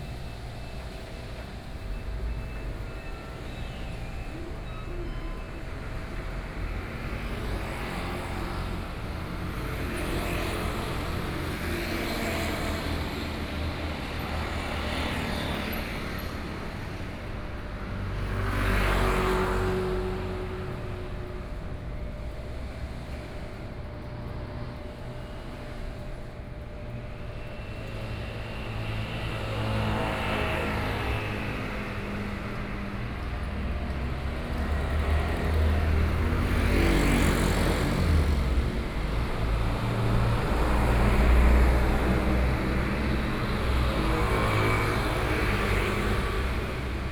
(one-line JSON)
{"title": "Taoyuan - Traffic noise", "date": "2013-09-11 07:51:00", "description": "In front of a convenience store, Sony PCM D50 + Soundman OKM II", "latitude": "24.99", "longitude": "121.32", "altitude": "106", "timezone": "Asia/Taipei"}